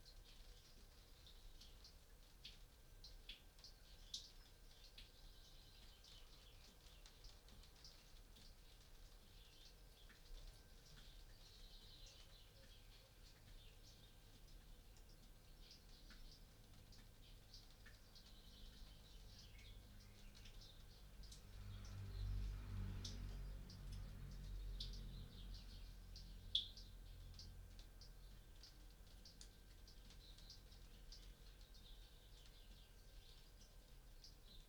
Luttons, UK - hail on a greenhouse ...
Passing hail showers on a greenhouse ... recorded inside with a dummy head ... bird song and passing traffic ...
Helperthorpe, Malton, UK, April 2017